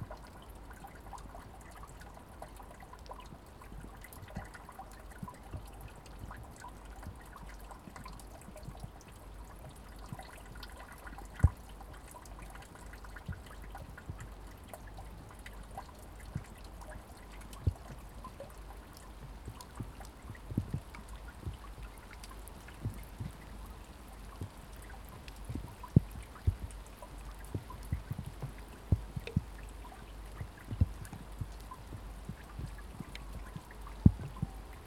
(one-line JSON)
{"title": "St Mary's Church, Swaythling, Southampton, UK - 035 Rainwater", "date": "2017-02-04 21:00:00", "latitude": "50.94", "longitude": "-1.38", "altitude": "10", "timezone": "GMT+1"}